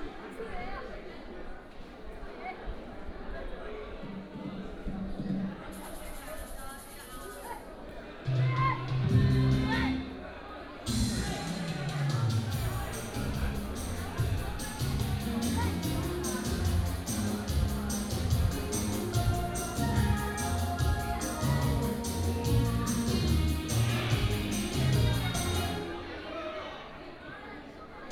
都歷, Chenggong Township - Aboriginal small village
Aboriginal small village, Residents Activity Center, The weather is very hot
6 September, 16:15